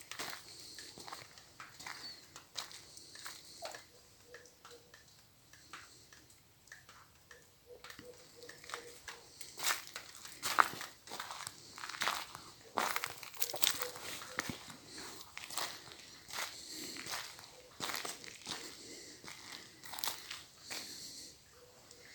Via Montegrappa, Levice CN, Italia - Baia Blanca Reloaded
Audio recording inside former Bay Blanca nightclub now disused: late afternoon, winter, fog, light rain. Walking inside, staying for a while, walking back out, on the main road.